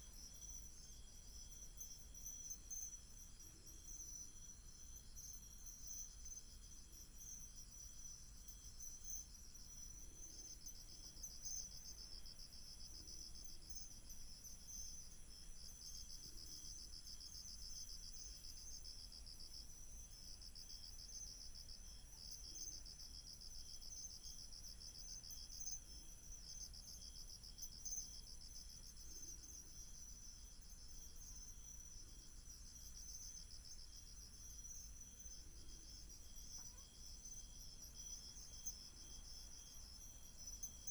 海埔路183巷, Xiangshan Dist., Hsinchu City - Insects
Insects, Binaural recordings, Sony PCM D100+ Soundman OKM II
Hsinchu City, Taiwan, September 2017